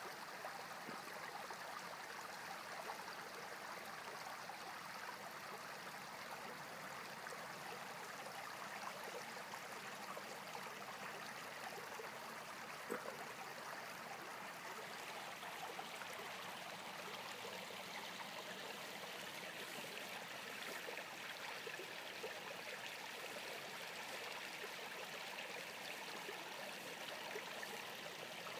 Recording of small brook at Vassar College. Recorded using iPhone 5c during February 2015 from small bridge.
NY, USA, 28 February 2015